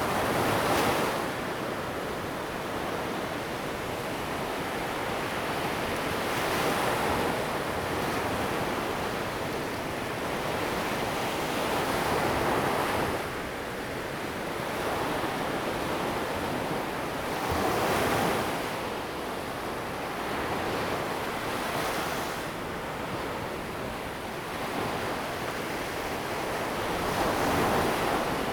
Beach, Sound of the waves, High tide time, Zoom H2n MS+XY